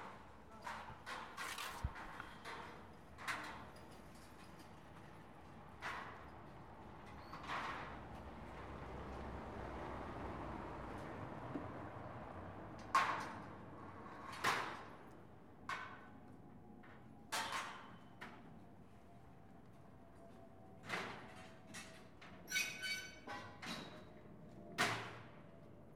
Rummelsburg, Berlin, Germany - Taking down scaffolding from building
A beautiful spring day in Lichtenberg. Builders remove some scaffolding that was on a building to enable it to be painted. Recorded with Zoom h4 and wind-protection.